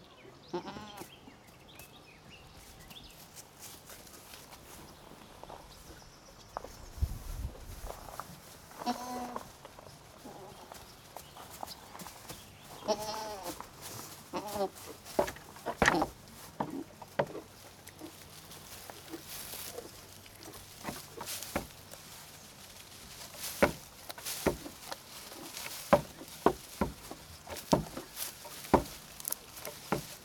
{"title": "Võnnu Parish, Tartu County, Estonia - Animal farm, goats", "date": "2013-05-31 11:11:00", "description": "visiting the animal farm with the kids", "latitude": "58.31", "longitude": "27.08", "altitude": "47", "timezone": "Europe/Tallinn"}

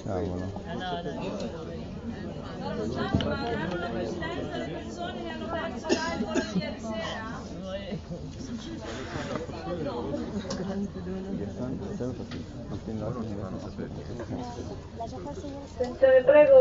{"title": "Easyjet Flight 4678 from Milano Malpensa Terminal 2", "description": "Along with about 60 other flights that day, also no. 4678 was cancelled, causing uprise among the passengers. mobility is sacred...", "latitude": "45.65", "longitude": "8.72", "altitude": "232", "timezone": "Europe/Berlin"}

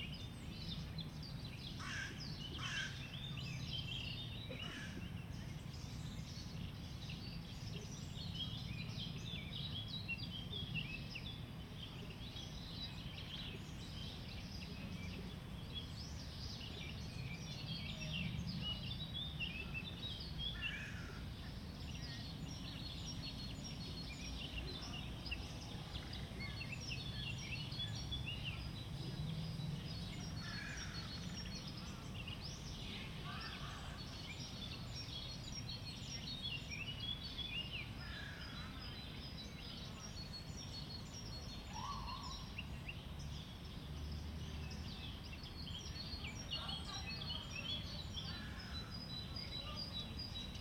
{"title": "Ogród Saski, Warszawa, Polska - Under the Temple of Vesta in the Saxon Garden", "date": "2022-05-11 16:20:00", "description": "A peaceful afternoon in the Saxon Garden in Warsaw - chirping birds - starlings - crows - passing people - bicycles.\nRecording made with Zoom H3-VR, converted to binaural sound", "latitude": "52.24", "longitude": "21.01", "altitude": "112", "timezone": "Europe/Warsaw"}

